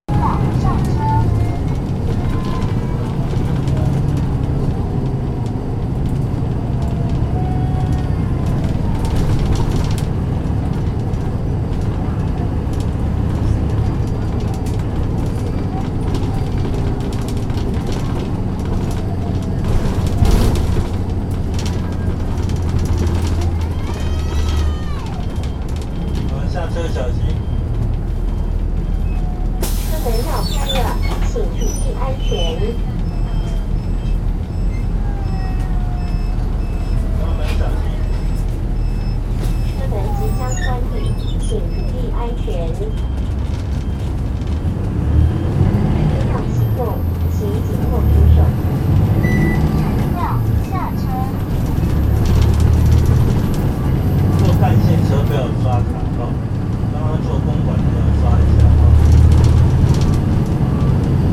Setion Xinglong Road, Taipei, Taiwan. - Bus
On the bus, 29.09.2020 13:21 Taipei/Taiwan.
Zoom iQ7, iPhone XR
29 September 2020, ~13:00